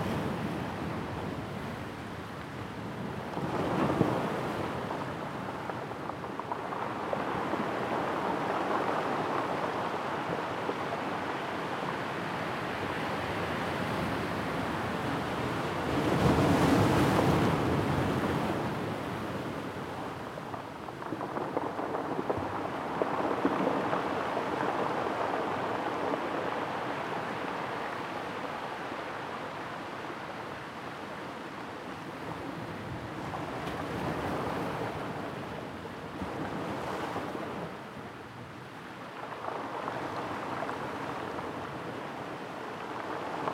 Madeira, Coast below ER101 /Camino dos Poios, Portugal - Waves on pebble-beach
Recorded with a Sound Devices 702 field recorder and a modified Crown - SASS setup incorporating two Sennheiser mkh 20 microphones.
3 September 2011, 17:57